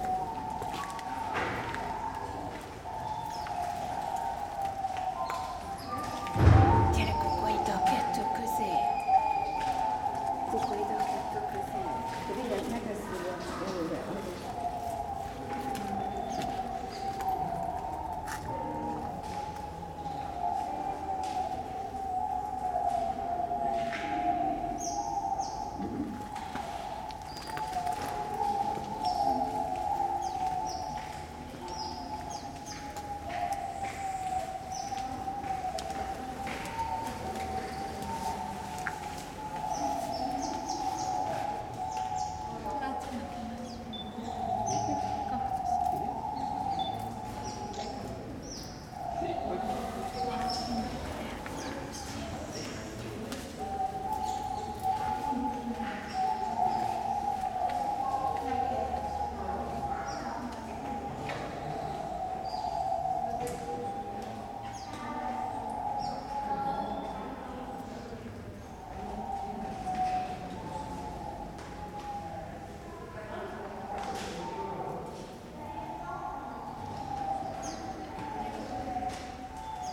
Schonbrunn desert house pigeons, Vienna
small pigeons and other birds in the desert house at Schonbrunn